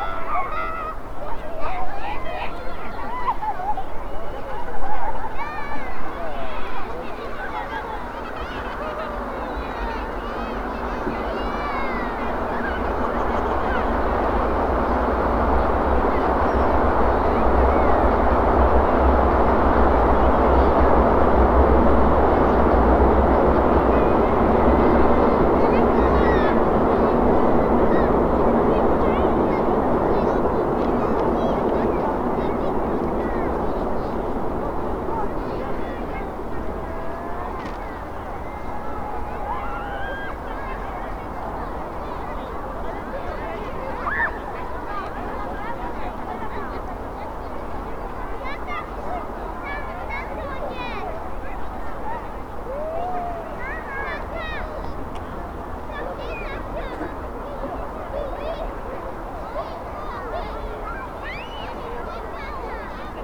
Vistula riverbank, Kraków, Poland - (722 UNI) Children playing in distance on snowy winter Sunday
Recording of children playing taken from across the river. During the time of recording on tram passed on the bridge on the left and one train on the bridge on the right.
Recoreded with UNI mics of Tascam DR100 MK III.